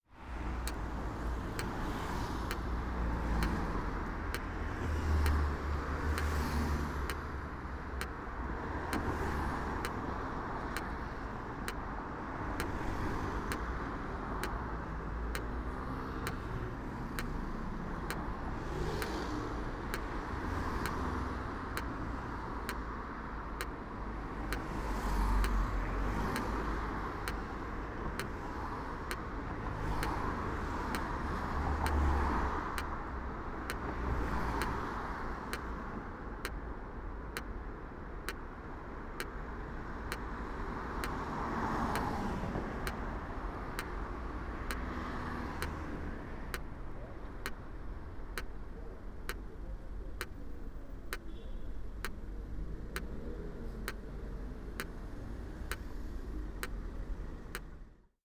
Brussels, Belgium - Mechanical traffic light system
Many of the traffic lights in Brussels feature a pre-recorded electronic signalling sound, indicating when it is safe and not safe to cross the road. However this one sounded more mechanical, and when you touch the actual post, you can feel the ticks of the mechanism very physically.